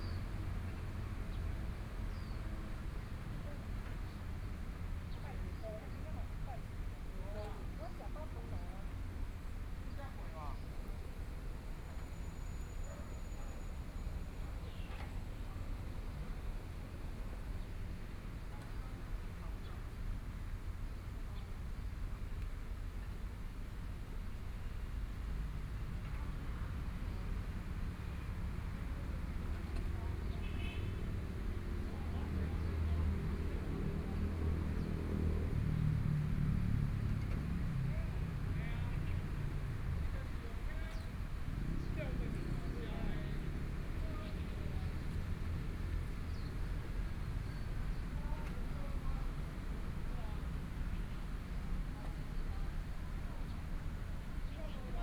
Lotus Pond, Kaohsiung - Standing beside the pool
Sound water-skiing facilities, Birdsong, The sound of water, Traffic Sound
Zuoying District, 新庄仔路2號, 15 May 2014